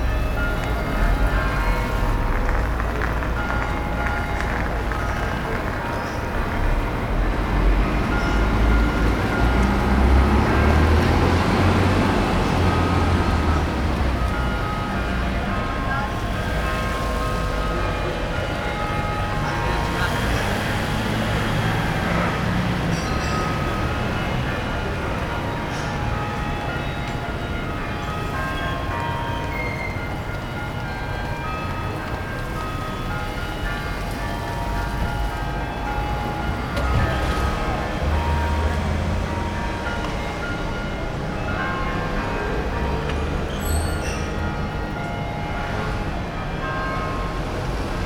Rapallo, in front of train station - piazza ambience
a man watering a a flower bed in front of the station. heavy traffic at the road crossing. bells in the distance are played manually by a man in the church tower.